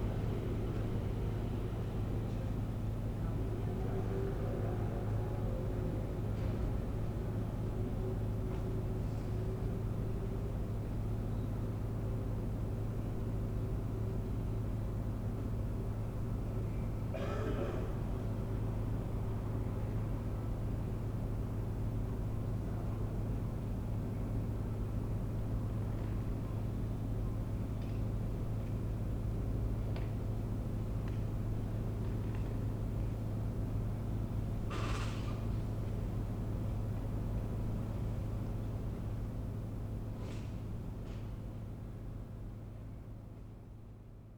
Berlin: Vermessungspunkt Friedel- / Pflügerstraße - Klangvermessung Kreuzkölln ::: 20.08.2010 ::: 01:31